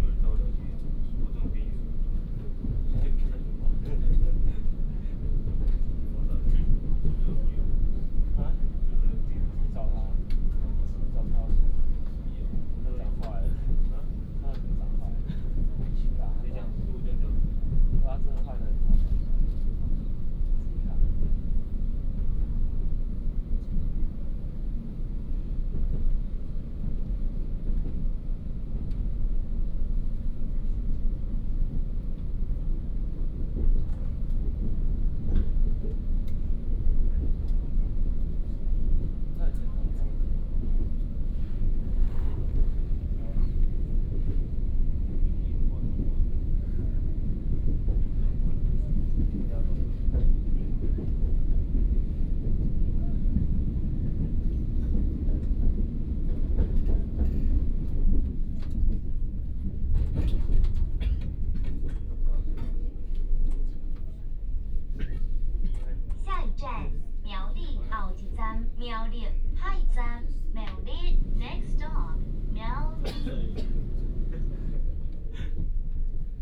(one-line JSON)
{"title": "Fengyuan Dist., Taichung City, Taiwan - Train compartment", "date": "2016-09-06 18:34:00", "description": "Train compartment, Messages broadcast vehicle interior", "latitude": "24.25", "longitude": "120.72", "altitude": "223", "timezone": "Asia/Taipei"}